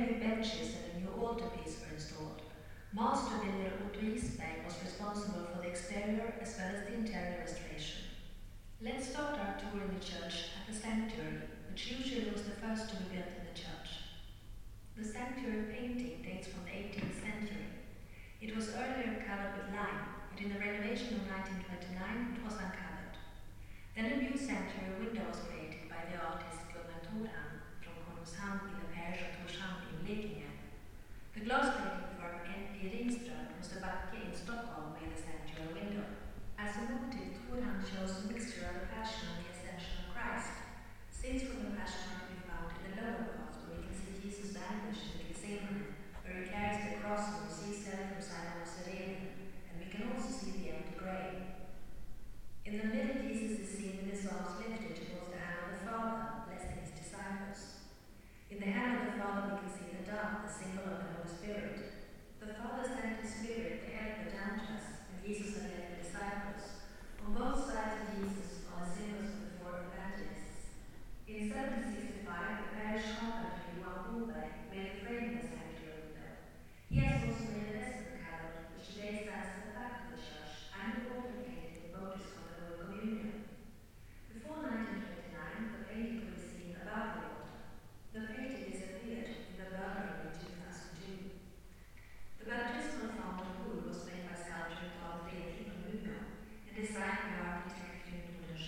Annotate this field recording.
Bygdea Kyrka visit. Doors, entry, CD-guide tour with varying quality of speakers as you walk down the centre aisle. Doors.